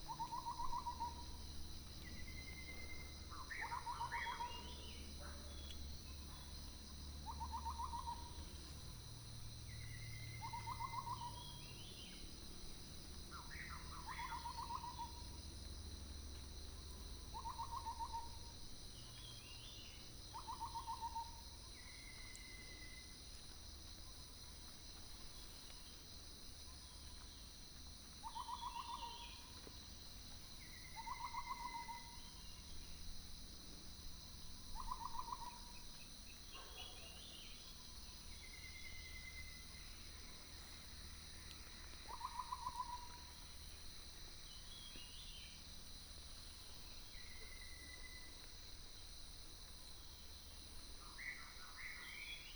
{
  "title": "Lane 水上, 桃米里, Puli Township - Birdsong",
  "date": "2016-07-14 04:59:00",
  "description": "Birdsong, Dogs barking, Early morning, Faced with bamboo and woods",
  "latitude": "23.94",
  "longitude": "120.92",
  "altitude": "555",
  "timezone": "Asia/Taipei"
}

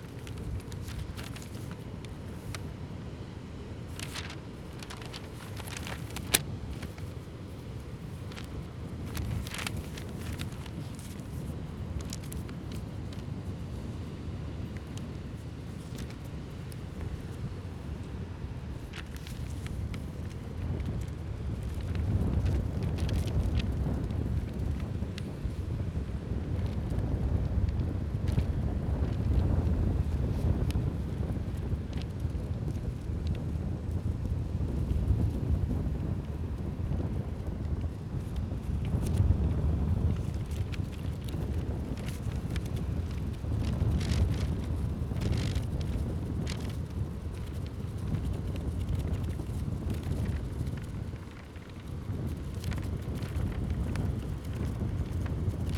{
  "title": "Sasino, at the beach - solo for a magazine",
  "date": "2013-08-25 13:09:00",
  "description": "a short solo for a magazine fluttering in the wind. manipulating the position, grip as well as folds of the pages in order to obtain various flapping sounds.",
  "latitude": "54.80",
  "longitude": "17.75",
  "altitude": "25",
  "timezone": "Europe/Warsaw"
}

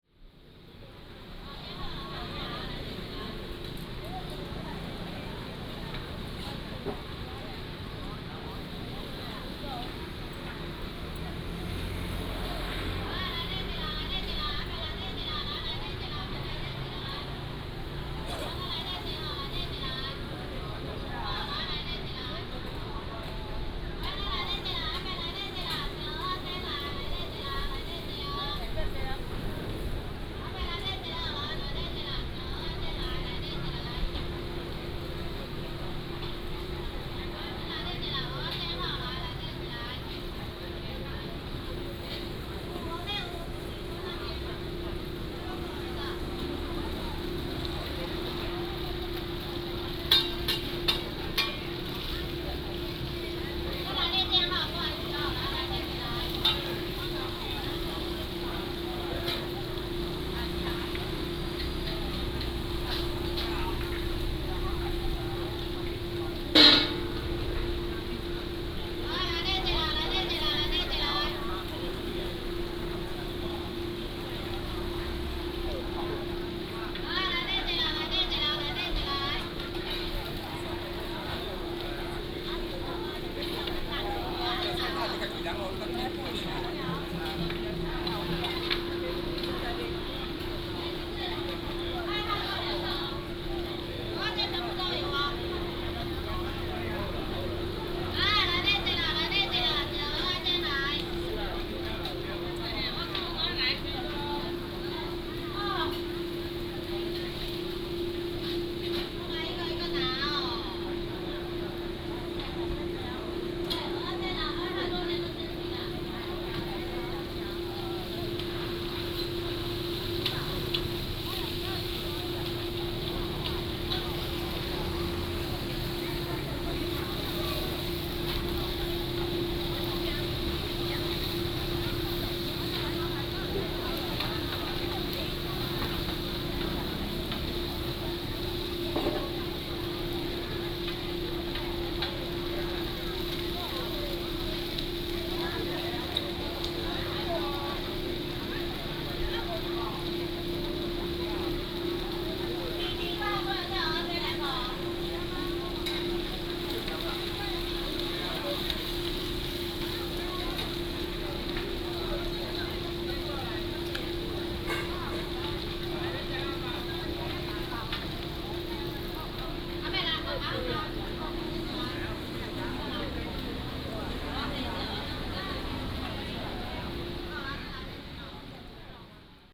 {
  "title": "Zhongshan Rd., Lukang Township - In the square",
  "date": "2017-02-15 10:51:00",
  "description": "In the square of the temple, Sellers selling sound",
  "latitude": "24.06",
  "longitude": "120.43",
  "altitude": "12",
  "timezone": "Asia/Taipei"
}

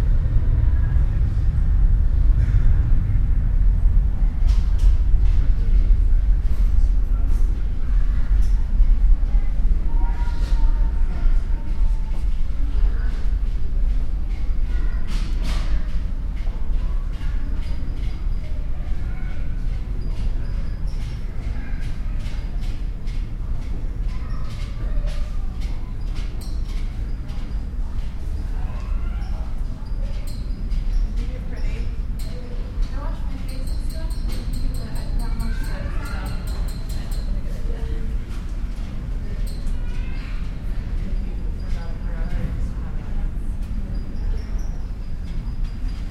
{
  "date": "2008-10-29 00:12:00",
  "description": "sitting and waiting at Schiphol airport ambience Holland",
  "latitude": "52.31",
  "longitude": "4.77",
  "altitude": "2",
  "timezone": "Europe/Berlin"
}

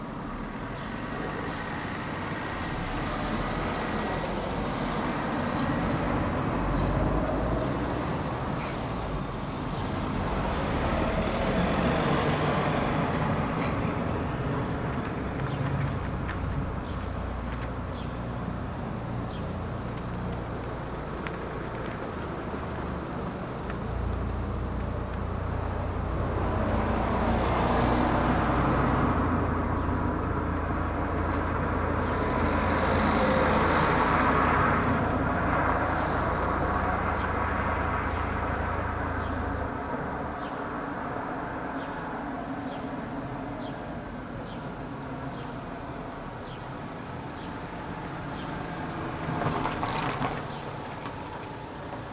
{
  "title": "Epicerie du Platane, Niévroz, France - drinking a coffee on the terrace of the grocery store",
  "date": "2022-07-22 11:20:00",
  "description": "Cars mostly.\nboire un café à la terrasse de l'épicerie.\nDes voitures surtout.\nTech Note : Sony PCM-M10 internal microphones.",
  "latitude": "45.83",
  "longitude": "5.06",
  "altitude": "185",
  "timezone": "Europe/Paris"
}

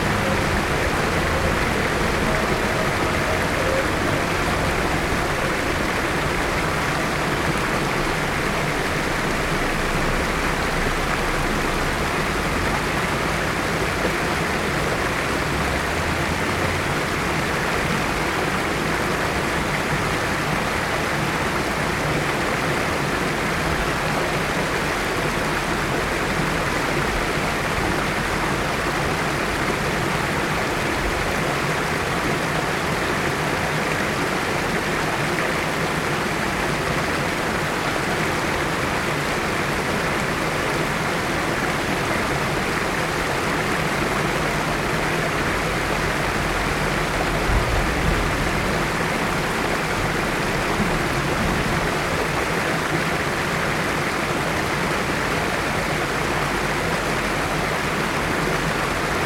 {
  "title": "cascade du Sierroz, Aix-les-Bains, France - Passe à poissons",
  "date": "2022-07-27 10:25:00",
  "description": "Avec la sécheresse le Sierroz est au plus bas et tout le flux d'eau passe uniquement par la passe à poissons aménagée à cet endroit près du pont du Bd Garibaldi. Quelques sons graves surnagent au dessus du bruit de l'eau, avion circulation automobile proche.",
  "latitude": "45.70",
  "longitude": "5.89",
  "altitude": "238",
  "timezone": "Europe/Paris"
}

